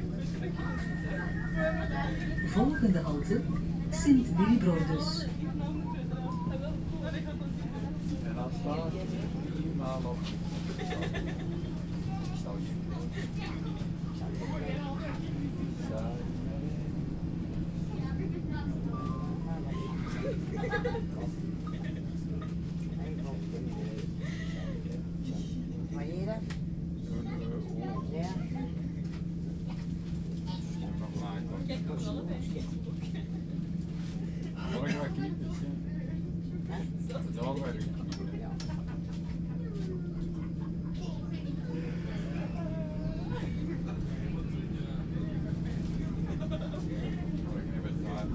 Berchem, Antwerpen, België - tram 15
driving with tram 15 from the city centre to suburb
15 February 2010, ~16:00